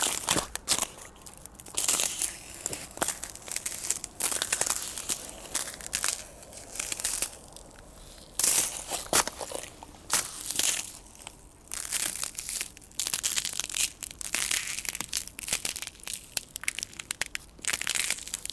Vernou-la-Celle-sur-Seine, France - Frozen bridge
Walking on ice, on a completely frozen bridge above the Seine river.